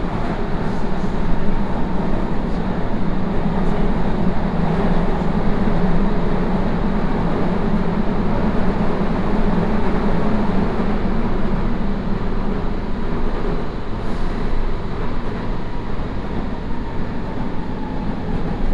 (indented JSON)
{
  "title": "Monastiraki Station Athens, Greece - (535) Metro ride from Monastiraki to Ethniki Amyna",
  "date": "2019-03-10 16:35:00",
  "description": "Binaural recording of a metro ride with line M3 from Monastiraki to Ethniki Amyna. It is pretty long with very regular periods between the stations.\nRecorded with Soundmann OKM + Sony D100",
  "latitude": "37.98",
  "longitude": "23.73",
  "altitude": "69",
  "timezone": "Europe/Athens"
}